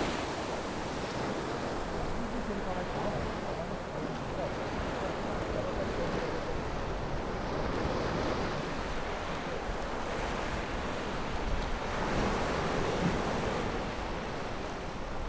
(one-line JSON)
{"title": "橋仔漁村, Beigan Township - the waves", "date": "2014-10-13 17:04:00", "description": "Sound wave, Small fishing port\nZoom H6 +Rode NT4", "latitude": "26.24", "longitude": "119.99", "altitude": "14", "timezone": "Asia/Shanghai"}